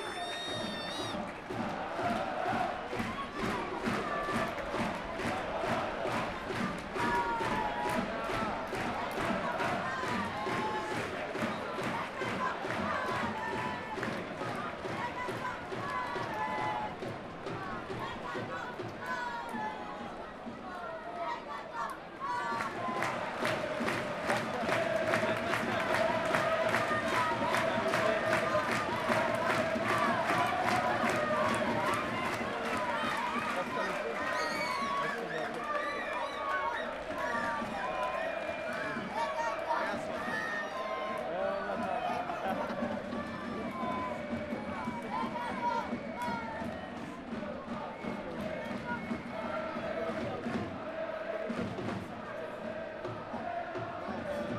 Rue du Manoir, Guingamp, France - Ambiance au stade du Roudourou à Guingamp
Le stade du Roudouroù accueille la dernière rencontre du championnat D2, En Avant de Guingamp contre Le Havre. Enregistrement zoom H4.